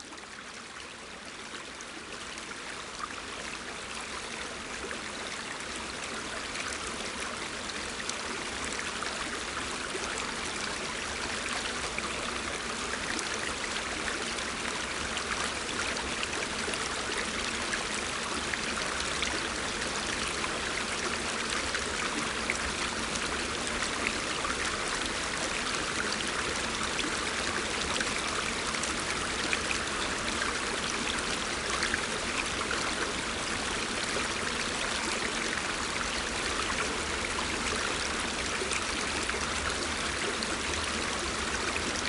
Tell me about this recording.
Recorded with a pair of DPA 4060s and a Marantz PMD661